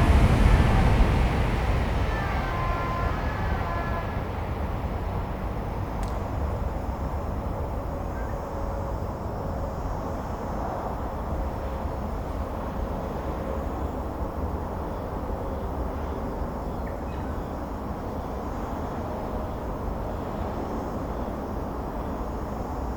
仁和步道, Hukou Township - under the high-speed railroads
under high-speed railroads, traffic sound, birds sound, Suona, Zoom H2n MS+XY
12 August 2017, 17:52